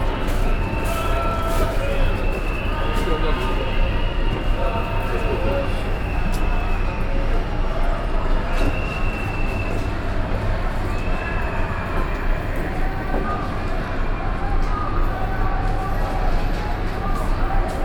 Brussels, Rue de la Vierge Noire, Parking 58, Occupy Brussels.
A soundwalk in the demonstration, then up on the roof of the parking 58, air conditionning system and back in the street.